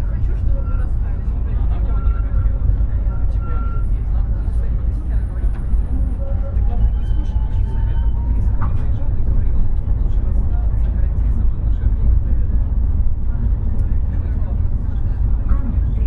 Kopli, Tallinn, Estonia - On the tram from Balti Jaam to Kadriorg
Young people discuss relationship issues